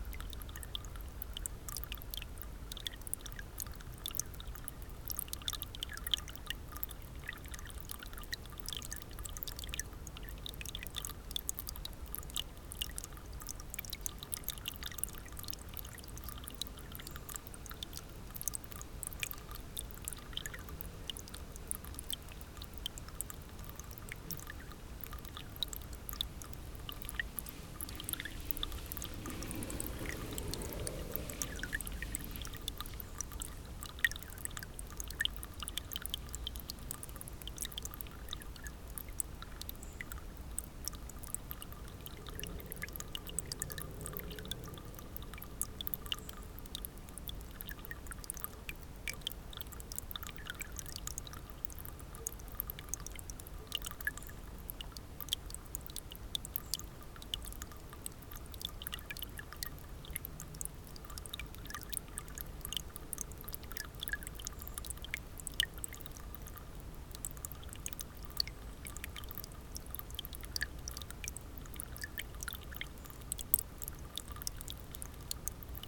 Unnamed Road, Harku, Harju maakond, Eesti - Drainage crossing the health trail.
Drainage crossing the health trail. Bicycles and joggers passing by. Recorder: Zoom H6, MSH-6 mic capsule
30 September